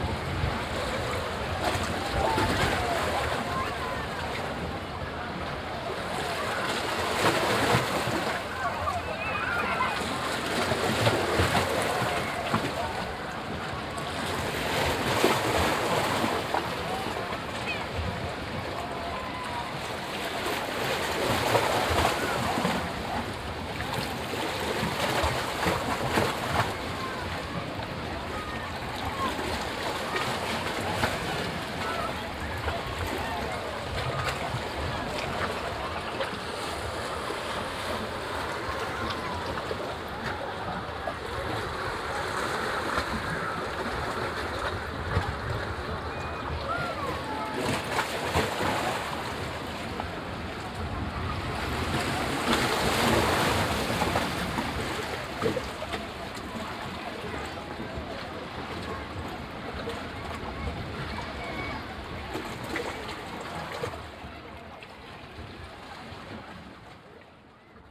sainte croix, cavern, sea waves, beach
Close to the sea at a small avern on a hot and mellow windy summer day. The sounds of the waves and the visitors of the nearby beach.
international sound ambiences and topographic field recordings